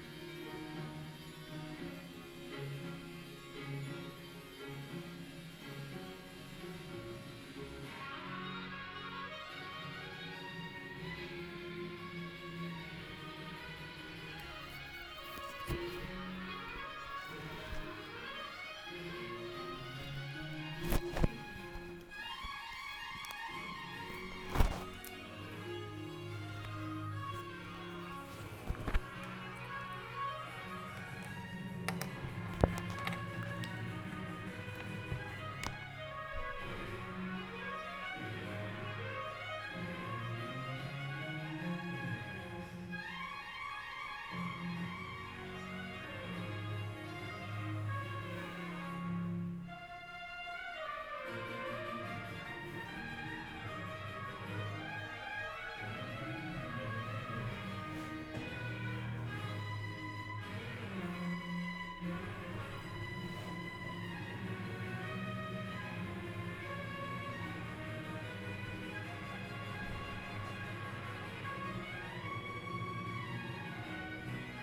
“Walk to outdoor market on Saturday in the time of covid19” Soundwalk
Chapter LXXVIII of Ascolto il tuo cuore, città. I listen to your heart, city.
Saturday May 16th 2020. Walk in the open-door square market at Piazza Madama Cristina, district of San Salvario, Turin, sixty seven days after (but day thirteen of Phase II) emergency disposition due to the epidemic of COVID19.
Start at 11:57 a.m., end at h. 00:24 p.m. duration of recording 26’42”
The entire path is associated with a synchronized GPS track recorded in the (kml, gpx, kmz) files downloadable here: